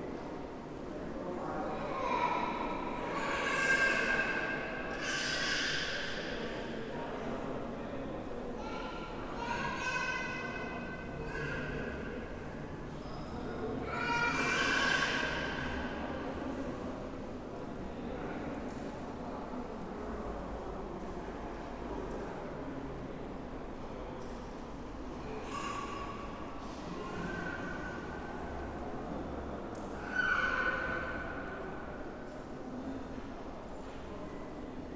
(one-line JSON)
{"title": "Mülheim an der Ruhr, Deutschland - mülheim (ruhr) hbf", "date": "2014-09-06 19:27:00", "description": "mülheim (ruhr) hbf", "latitude": "51.43", "longitude": "6.89", "altitude": "49", "timezone": "Europe/Berlin"}